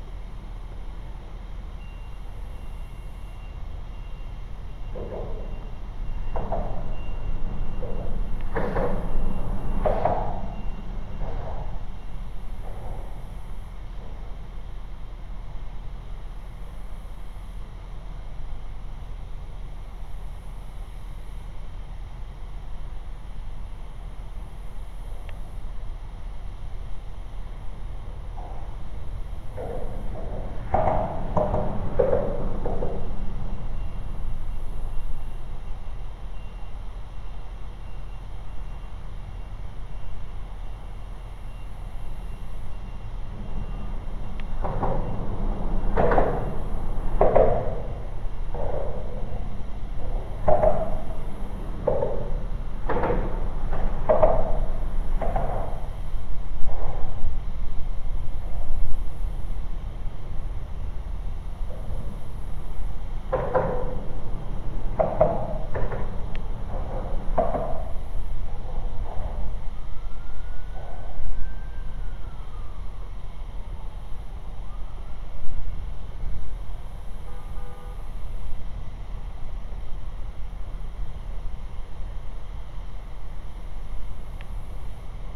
{"title": "Liberty Rd, Houston, TX, USA - Underpass ping pong", "date": "2021-09-20 13:54:00", "description": "Sounds of vehicles driving ove expansion joins on overpass above. Distant train noises can be heard from huge railyard.", "latitude": "29.80", "longitude": "-95.29", "altitude": "17", "timezone": "America/Chicago"}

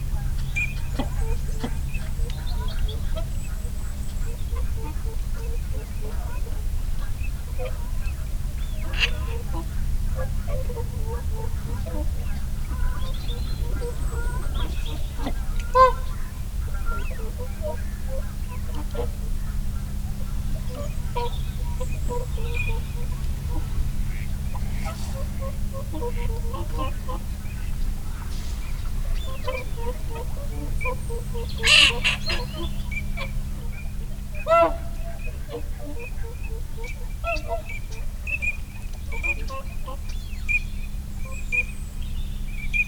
{"title": "Dumfries, UK - teal call soundscape ...", "date": "2022-02-04 07:00:00", "description": "teal call soundscape ... dpa 4060s clipped to bag to zoom f6 ... folly pond hide ... bird calls from ... snipe ... redwing ... whooper swan ... shoveler ... mute swan ... moorhen ... wigeon ... barnacle geese ... pink-footed geese ... time edited unattended extended recording ... background noise ...", "latitude": "54.98", "longitude": "-3.48", "altitude": "8", "timezone": "Europe/London"}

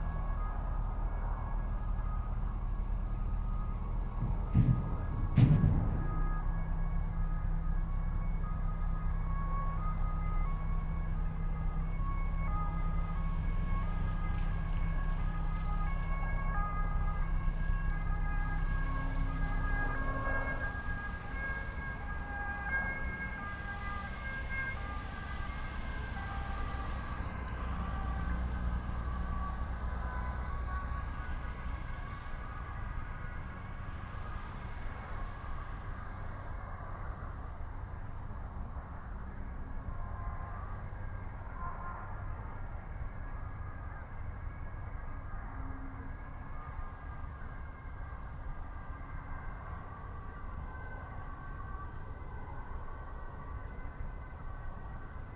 {"title": "Veerweg, Bronkhorst, Netherlands - Bronkhorst Veerpont", "date": "2021-01-08 15:16:00", "description": "Tugboat, Ferry, distant road traffic with siren in distance.\nSoundfield Microphone, Stereo decode.", "latitude": "52.08", "longitude": "6.17", "altitude": "8", "timezone": "Europe/Amsterdam"}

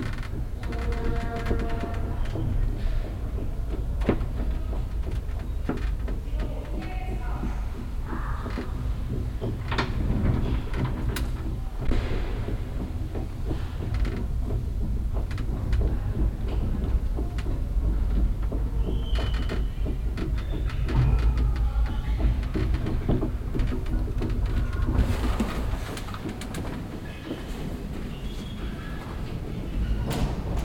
{"title": "stuttgart, rathaus, paternoster", "date": "2010-06-19 18:46:00", "description": "inside the old paternoster elevator at stuttgart rathaus\nsoundmap d - social ambiences and topographic field recordings", "latitude": "48.77", "longitude": "9.18", "altitude": "250", "timezone": "Europe/Berlin"}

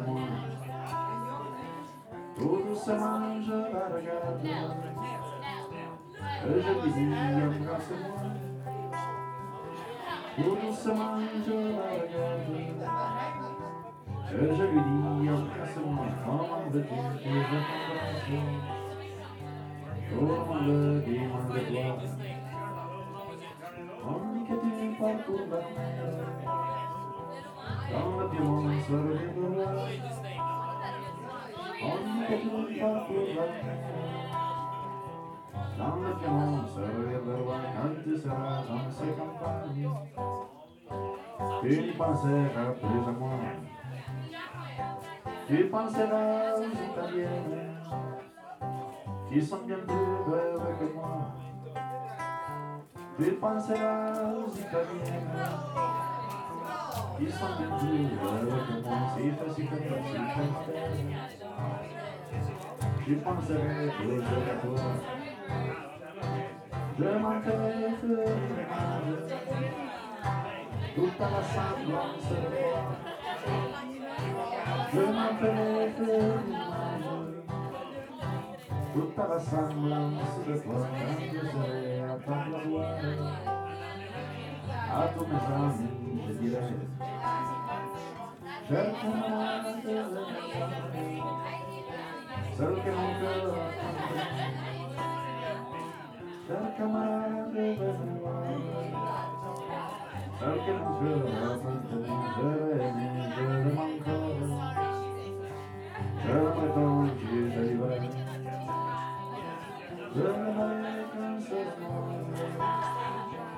{"title": "Via Maestra, Rorà TO, Italia - Stone Oven House August 29/30 2020 artistic event 1 of 3", "date": "2020-08-29 21:00:00", "description": "Music and contemporary arts at Stone Oven House, Rorà, Italy; event 1 of 3\nOne little show. Two big artists: Alessandro Sciaraffa and Daniele Galliano. 29 August.\nSet 1 of 3: Saturday, August 30th, h.9:00 p.m.", "latitude": "44.79", "longitude": "7.20", "altitude": "893", "timezone": "Europe/Rome"}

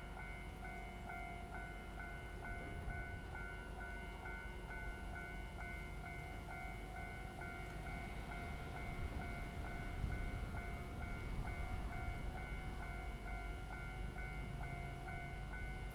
{"title": "Xinsheng St., Yuli Township - In the railway level crossing", "date": "2014-10-09 16:40:00", "description": "Traffic Sound, Train traveling through the sound, Beside the railway tracks\nZoom H2n MS+XY", "latitude": "23.34", "longitude": "121.31", "altitude": "141", "timezone": "Asia/Taipei"}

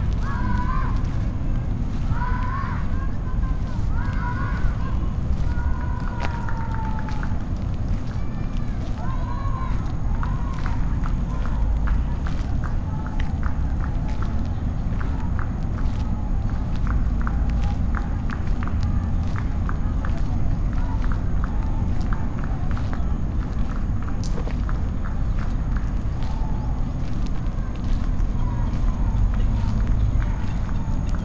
{"title": "Hacienda del Campestre, Hacienda del Campestre, León, Gto., Mexico - Parque de Los Cárcamos, caminando despacio desde el lago a la puerta de Adolfo López Mateos.", "date": "2021-10-02 13:46:00", "description": "Parque de Los Cárcamos, walking slowly from the lake to Adolfo López Mateos’ door.\nI made this recording on october 2nd, 2021, at 1:46 p.m.\nI used a Tascam DR-05X with its built-in microphones and a Tascam WS-11 windshield.\nOriginal Recording:\nType: Stereo\nEsta grabación la hice el 2 de octubre de 2021 a las 13:46 horas.", "latitude": "21.17", "longitude": "-101.68", "altitude": "1827", "timezone": "America/Mexico_City"}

